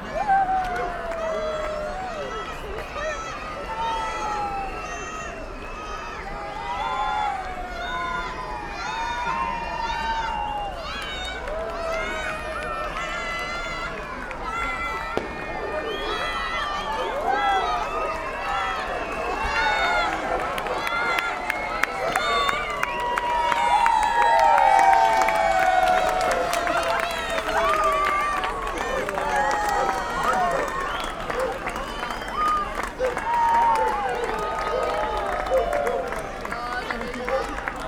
Engelbecken, Kreuzberg/Mitte, Berlin, Deutschland - 25y of German Unity celebration
location of the former Berlin wall, 25y of German Unity celebration. People are applauding when some of the 7000 illuminated ballons, along the former wall line, lifting off.
(Sony PCM D50, DPA4060)
Berlin, Germany, 9 November 2014, 19:35